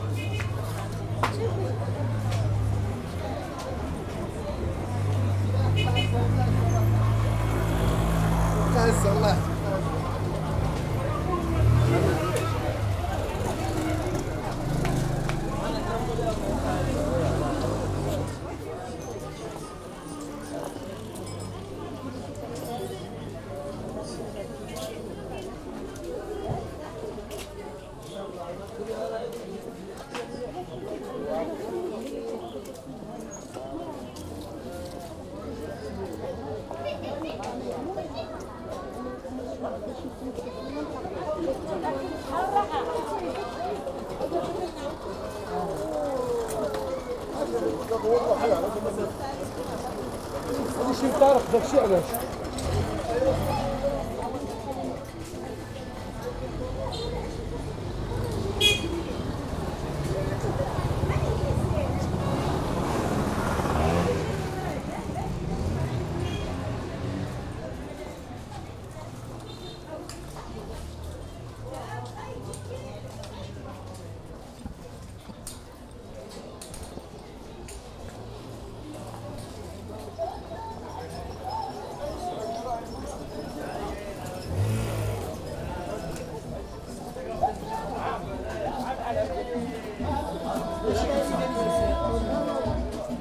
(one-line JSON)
{"title": "Rue Bab Doukkala, Marrakesch, Marokko - walk along street market", "date": "2014-02-25 13:40:00", "description": "walk along the street market in Rue Bab Doukkala.\n(Sony D50, DPA4060)", "latitude": "31.63", "longitude": "-8.00", "timezone": "Africa/Casablanca"}